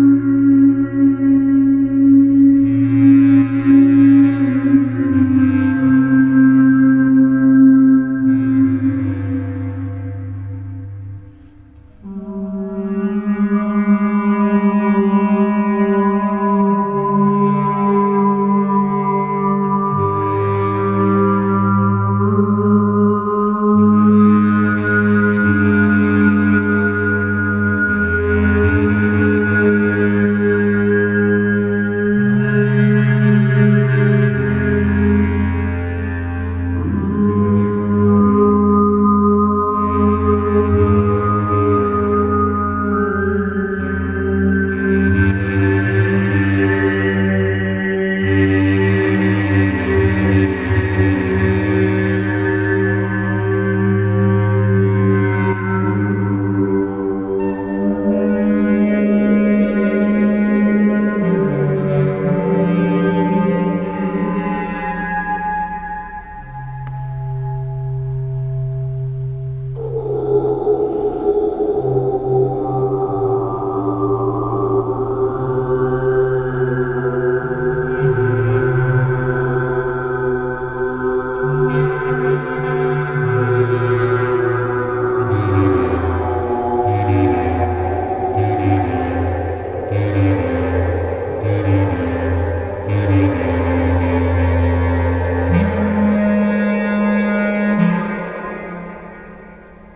Kirche am Tempelhofer Feld
Innenraum mit Stimme+Bassklarinette (wanco)